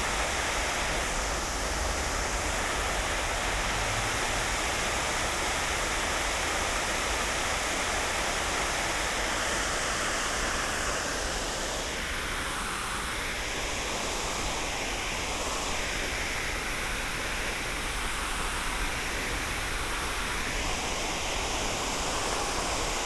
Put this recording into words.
fountain in Parc Ed Klein, (Olympus LS5, Primo EM172)